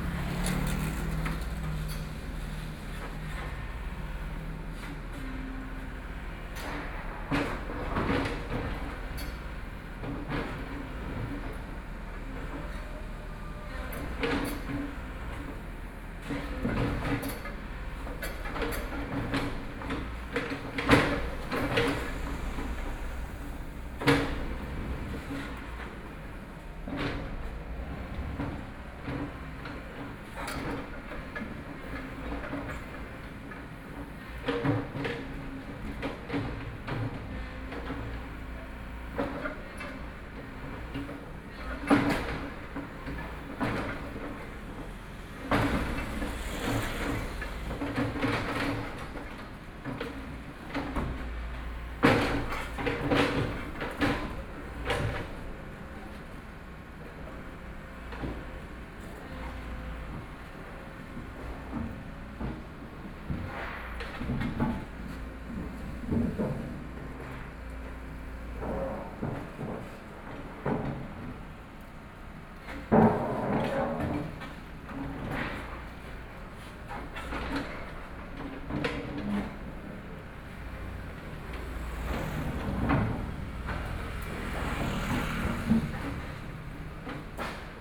Zhongshan District, Taipei City - Construction site
Construction Sound, Traffic Sound, Binaural recordings, Zoom H4n+ Soundman OKM II
Taipei City, Taiwan, 20 January 2014